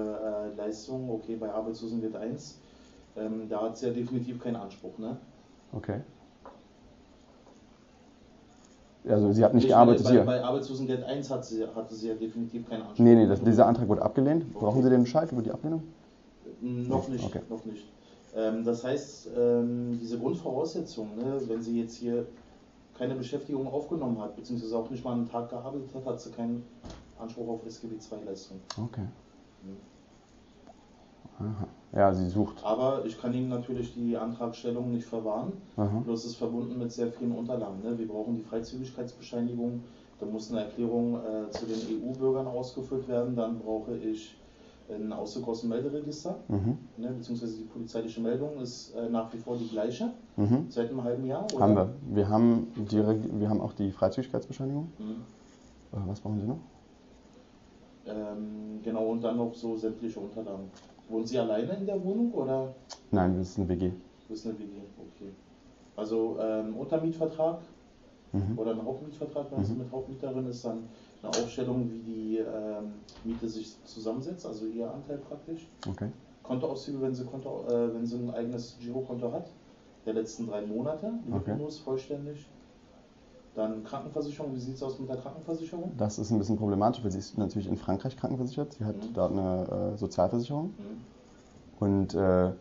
Ein Antrag auf Arbeitslosengeld II für EU-Bürger im Rahmen des Hauptmann-von-Köpenick-Dilemmas. Ein Gespräch über das gut behütete Erbe preussischer Bürokratie.